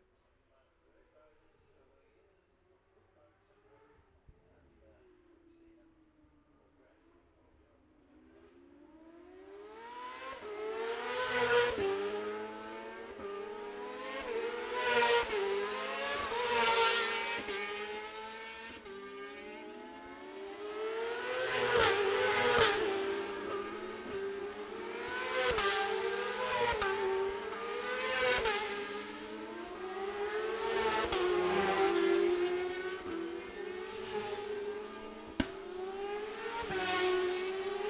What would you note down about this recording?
bob smith spring cup ... 600cc heat 1 race ... dpa 4060s to MixPre3 ...